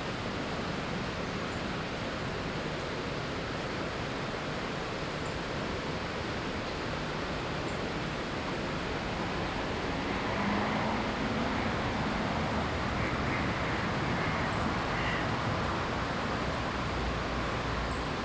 Scarborough, UK - Autumn, Peasholm Park, Scarborough, UK
Binaural field recording Autumn, Peasholm Park, Scarborough, UK
Waterfall, ducks, other bird life